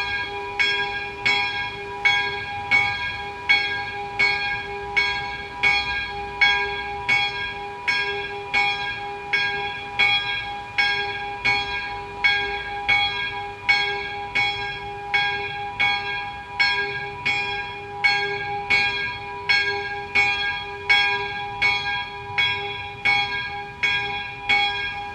Longsdorf, Tandel, Luxemburg - Longsdorf, Ermitage, bells
An der Longsdorfer Ermitage an einem windigen Sommer Tag. Der Klang der 12 Uhr Glocken.Im Hintergrund Kinderstimmen.
At the Longsdorf Ermitage on windy summer day. The sound of the 12 o clock bells. In the background voices of childen.
Tandel, Luxembourg, 7 August 2012